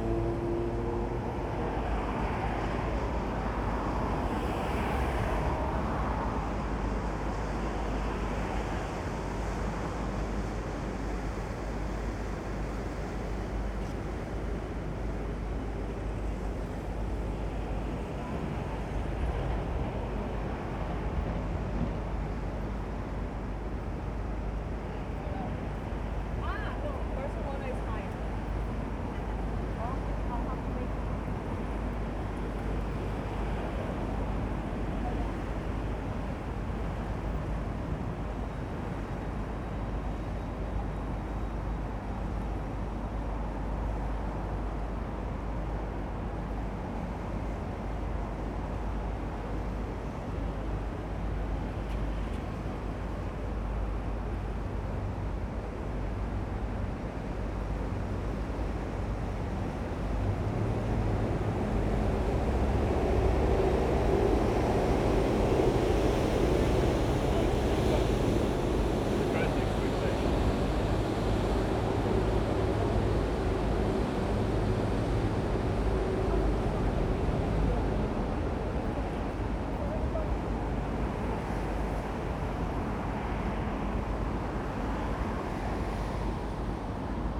Blackfriars Bridge traffic and the faint river, Sea Containers House, Upper Ground, London, UK - Blackfriars Bridge traffic and the faint river
A passing river taxi creates river waves barely audible above the traffic.